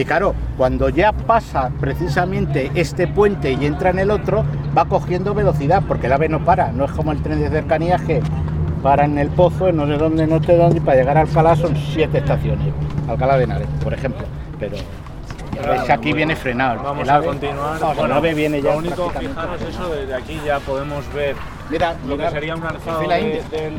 {"title": "Pacífico, Madrid, Madrid, Spain - Pacífico Puente Abierto - Transecto - 11 - Calle Cocheras. La importancia de las canchas de baloncesto", "date": "2016-04-07 20:15:00", "description": "Pacífico Puente Abierto - Transecto - 11 - Calle Cocheras. La importancia de las canchas de baloncesto", "latitude": "40.40", "longitude": "-3.68", "altitude": "618", "timezone": "Europe/Madrid"}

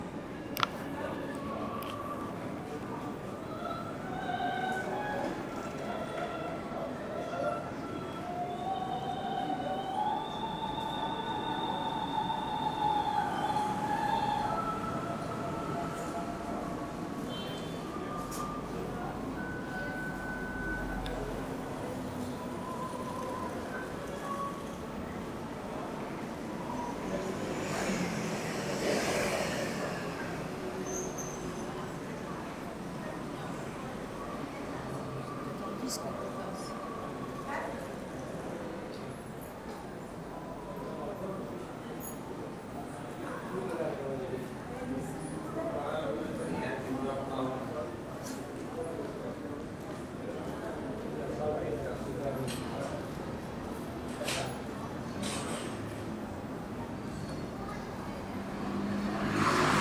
{"title": "Carrer la Rambla, Barcelona, Espagne - sur le trottoir de lopéra", "date": "2019-03-14 16:12:00", "description": "En passant devant l'opéra de Barcelone, on pense que des chanteurs sont en répétition pour une future représentation, mais en fait c'était un disque que diffusait l'opéra de Barcelone sur ses trottoirs\nAs we pass by the Barcelona opera, we think that singers are in the process of rehearsing for a future performance, but in fact it was a disc that was broadcast by the Barcelona Opera on its sidewalks.", "latitude": "41.38", "longitude": "2.17", "altitude": "7", "timezone": "GMT+1"}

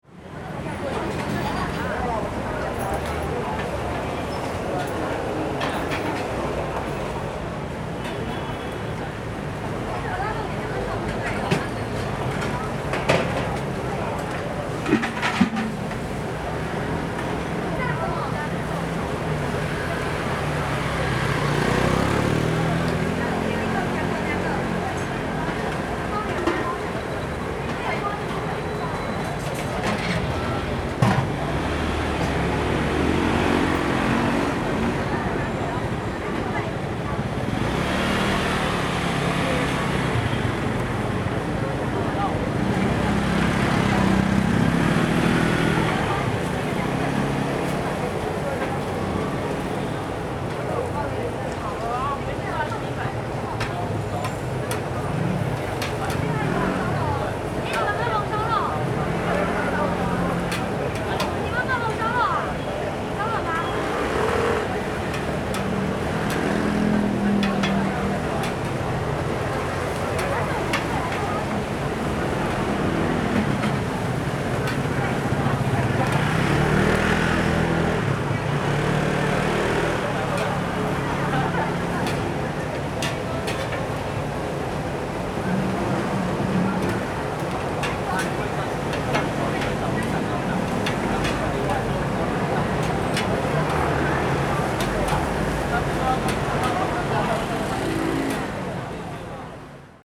{"title": "Minle Rd., Zhonghe Dist., New Taipei City - Vendors", "date": "2012-02-16 18:21:00", "description": "Vendors, Traffic Sound\nSony Hi-MD MZ-RH1 +Sony ECM-MS907", "latitude": "25.00", "longitude": "121.48", "altitude": "16", "timezone": "Asia/Taipei"}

